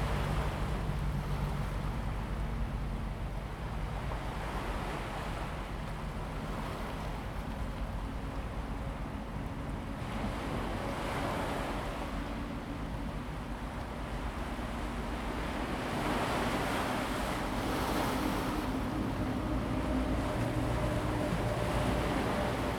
縱貫公路, 獅子頭 Fangshan Township - On the coast
On the coast, Sound of the waves, Traffic sound, Early morning at the seaside
Zoom H2n MS+XY